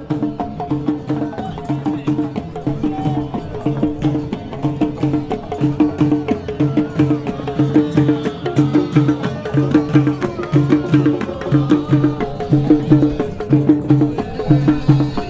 Place Jemaa El Fna, Marrakech, Maroc - Place Jemaa El Fna in the evening
Evening night, you can listen musicians